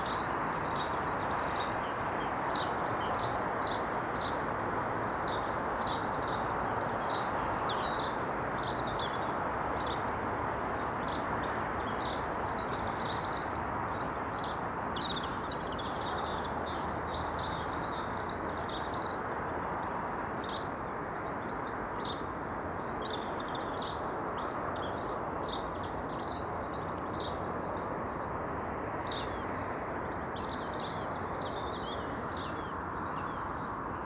The Drive High Street Little Moor Highbury Brentwood Avenue Fairfield Road
The dawn-lit moon
hangs
in the cold of the frosted dawn
Motorway sound is unrelenting
Sparrows chat and robin sings
inside the traffic’s seething